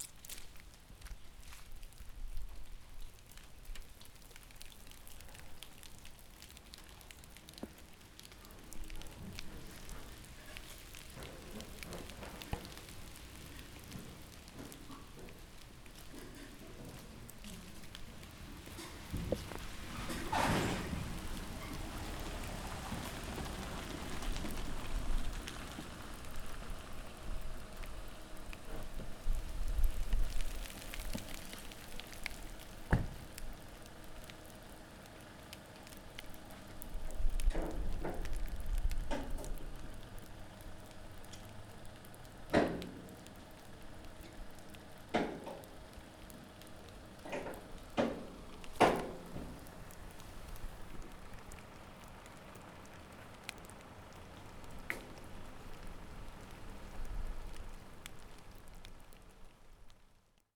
Rainy day, walking on soggy floor, recorded using a Zoom H4n
21 April 2013, 17:00